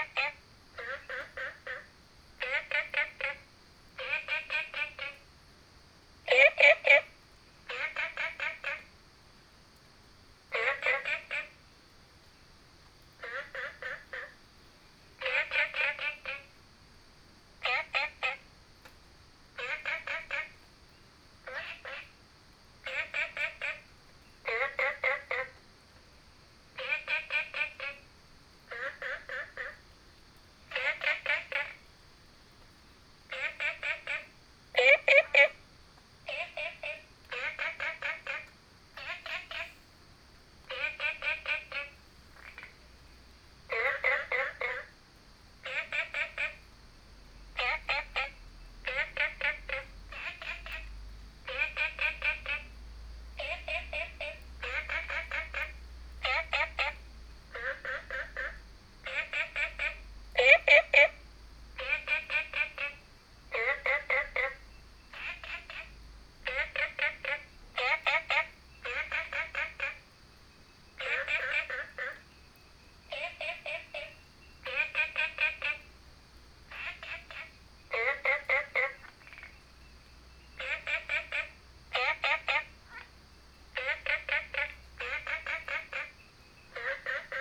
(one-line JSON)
{"title": "綠屋民宿, 桃米里 Taiwan - Frogs sound", "date": "2015-09-02 23:00:00", "description": "Frogs sound, Small ecological pool", "latitude": "23.94", "longitude": "120.92", "altitude": "495", "timezone": "Asia/Taipei"}